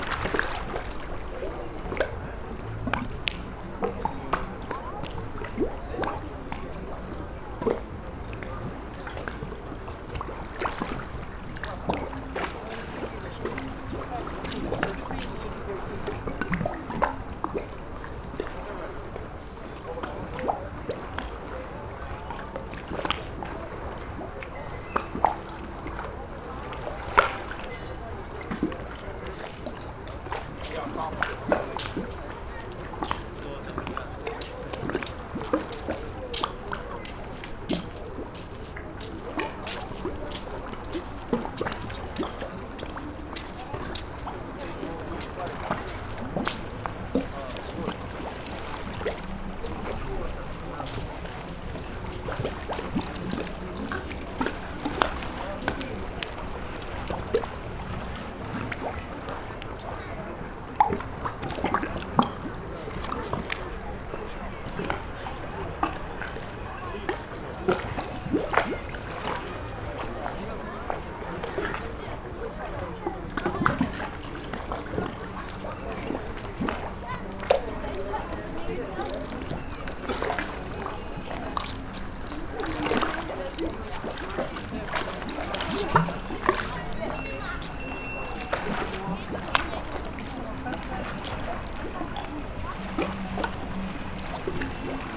Novigrad, Croatia - three round and two square holes
sounds of sea and night walkers